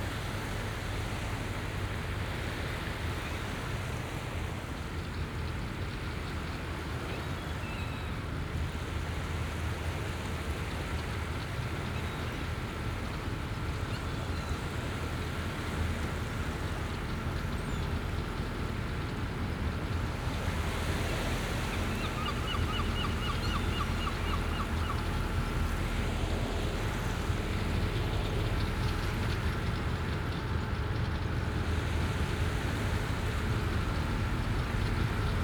Scarborough, UK - Summer, South Bay, Scarborough, UK
Binaural field recording
7 July 2012